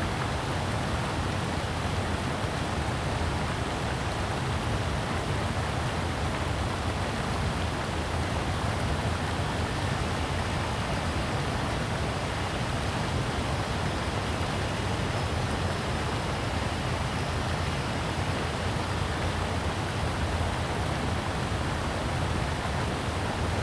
TaoMi River, 桃米里 Taiwan - Weir
In the stream, Weir, Cicadas cry
Zoom H2n MS+XY
Puli Township, 桃米巷71號